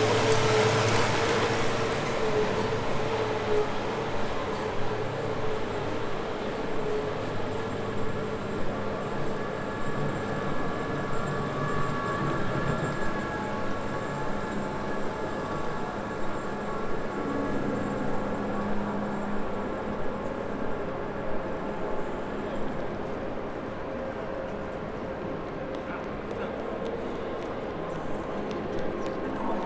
Airport Trainstation, Frankfurt am Main, Deutschland - Hall with many echoes
Entering the large hall of the „Fernbahnhof“ at Frankfurt Airport. The echos in this space have a kind of psychodelic effect. There are not many people, the ticket counter is open but very reduced...
Hessen, Deutschland, 24 April, 16:43